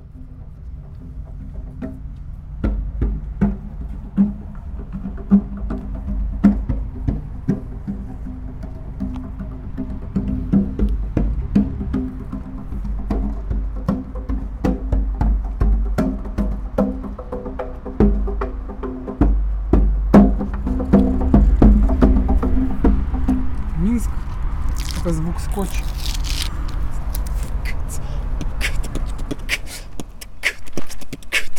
The sounds of a local band Shaman Jungle making an art installation at Kastrychnickaya street, talking to people and performing.